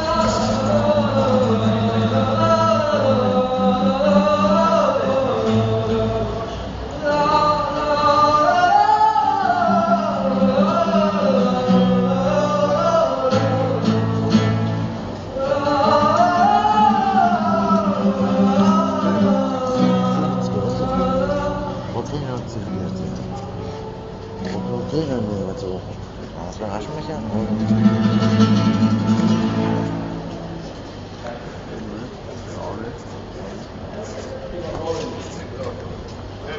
Musician sings the Koreibiniki, better known as the Tetris anthem
People stop to listen as they hear a very familiar melody. Ever resounding childhood memory... Passenger crossway underneath S-Bahn bridge, Bahnhof Friedrichstr.